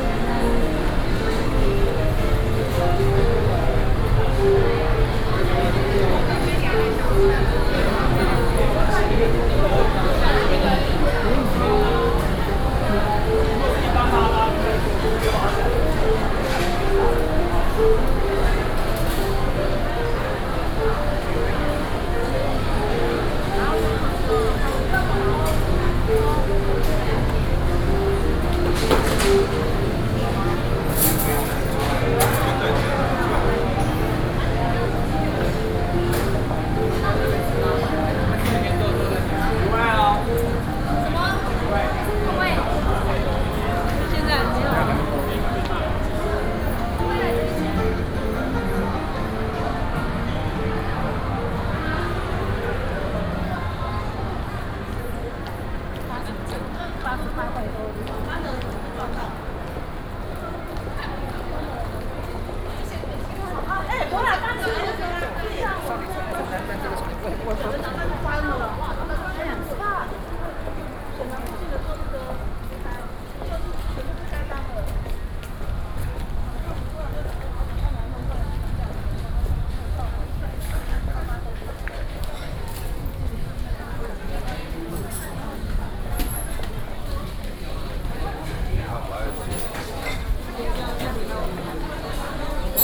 Banqiao Station, New Taipei City, Taiwan - Walking in the station hall
Walking in the station hall
Sony PCM D50+ Soundman OKM II
20 June 2012